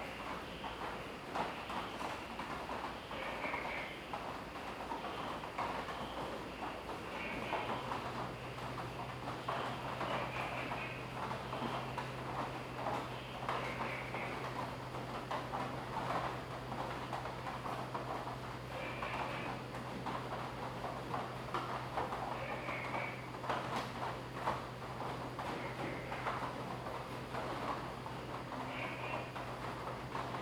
Woody House, 埔里鎮桃米里 - rain
Frog calls, rain
Zoom H2n MS+XY
Nantou County, Taiwan